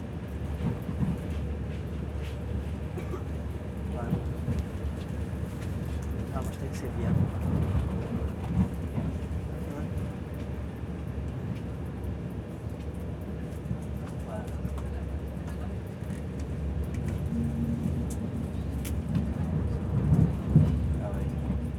Azambuja, on the train to porto - phone conversation
a man talking on the phone during the journey to porto. the conversation went on for over two hours. in the background hum and rumble of the train.